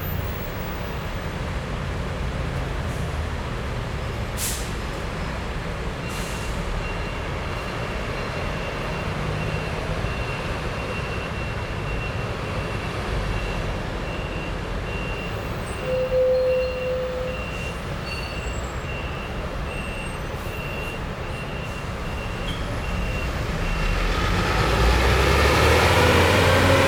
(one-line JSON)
{"title": "Sec., Xianmin Blvd., Banqiao Dist., New Taipei City - In the bus transfer station", "date": "2011-11-29 17:11:00", "description": "In the bus transfer station, Traffic Sound\nZoom H4n +Rode NT4", "latitude": "25.01", "longitude": "121.46", "altitude": "10", "timezone": "Asia/Taipei"}